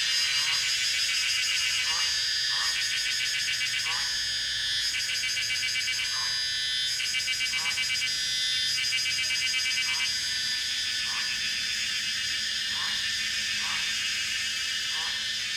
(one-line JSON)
{"title": "Taomi Ln., 桃米里 Puli Township - Cicadas cry", "date": "2016-05-16 16:08:00", "description": "Cicadas cry, Goose calls, Traffic Sound\nZoom H2n MS+XY", "latitude": "23.94", "longitude": "120.93", "altitude": "473", "timezone": "Asia/Taipei"}